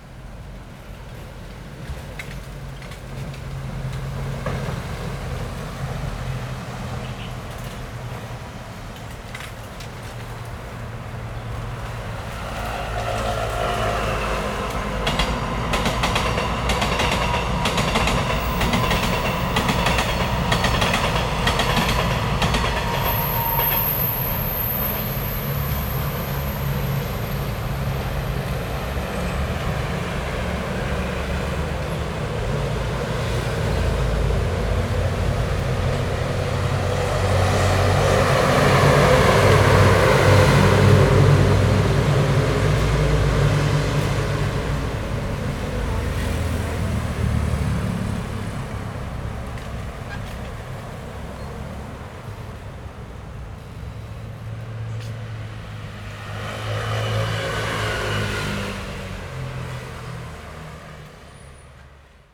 Zhongzheng 2nd Rd., Yingge Dist., New Taipei City - In the bamboo forest
In the bamboo forest, Traffic Sound, Traveling by train
Zoom H4n XY+Rode NT4
New Taipei City, Taiwan, 29 November 2011, ~14:00